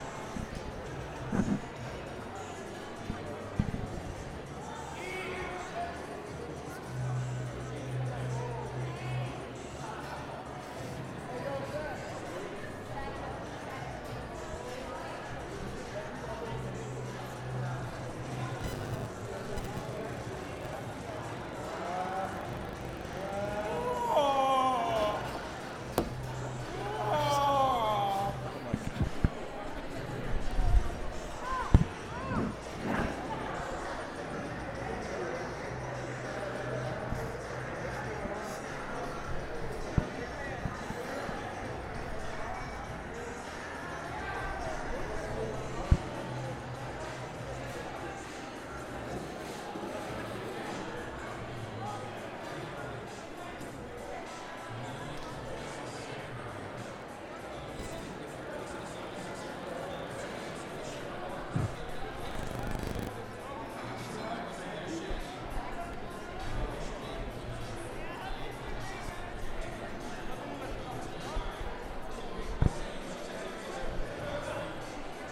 The College of New Jersey, Pennington Road, Ewing Township, NJ, USA - Eickhoff Dining Hall
During the time of recording, the Eickhoff dining hall was packed full of student eating dinner.